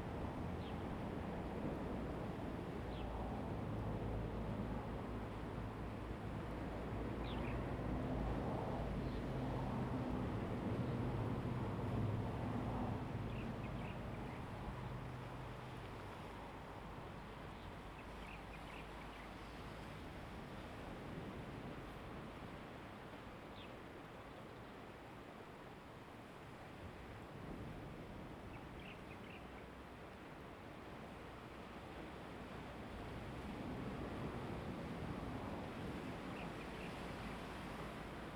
東興, 新社村, Fengbin Township - the waves
Small towns, Traffic Sound, Sound of the waves, Very Hot weather
Zoom H2n MS+XY